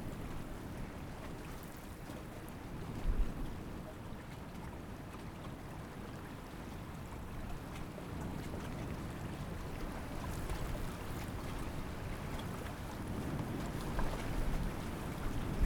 Changhua, Taiwan - waves
Strong winds, Sound waves, Zoom H6 MS
Changhua County, Fangyuan Township, 永興海埔地海堤, 9 March 2014